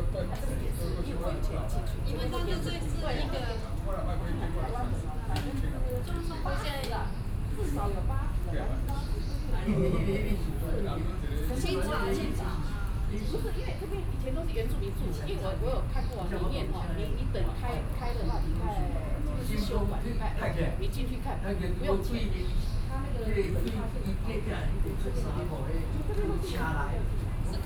Taipei Botanical Garden, Taiwan - Chat
a group of people are sharing the history （Aboriginal history）of recording locations, Sony PCM D50 + Soundman OKM II
中正區 (Zhongzheng), 台北市 (Taipei City), 中華民國